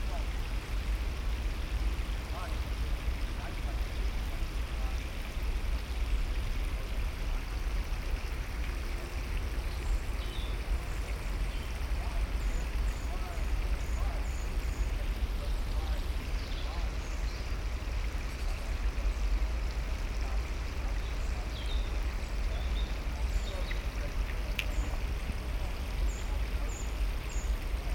Wolfheze, Netherlands - Vallende Eikels
Acorns falling in the woods near Wolfheze. Stream, wind in trees, voices, motorway traffic in background